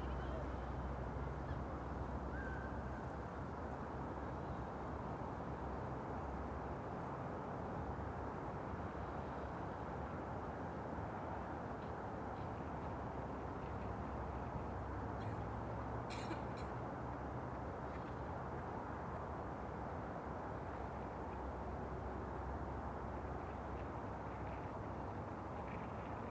Greenlake, in the center of north Seattle, is very popular with joggers, bicyclists, roller bladers, skate boarders and dog walkers in summertime, but in the dead of winter it's almost perfectly still. Only the stoutest venture out in sub-freezing weather like this. I'm not one of them: I quit recording after 38 minutes.
Major elements:
* Mallards, seagulls, crows and one bald eagle wearing a stocking cap
* A few hearty joggers
* A Park Dept. employee (he had to be there)
* Small planes and larger jets on approach to SeaTac
* The everpresent rumble of Highway 99